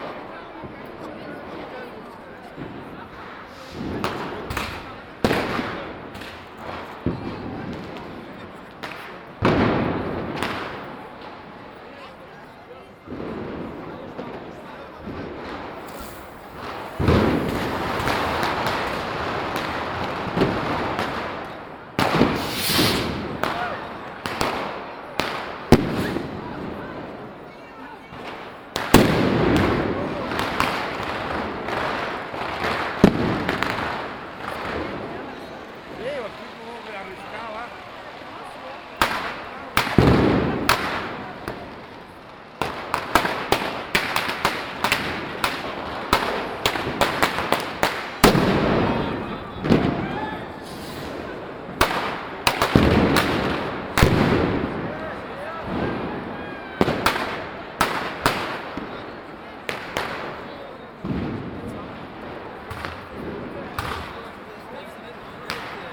Stephansplatz, Vienna - New Year 2009 (schuettelgrat)
New Years Celebrations at Viennas Stephansplatz, Binaural Recording, Fireworks, People and the bell of St. Stephens Cathedral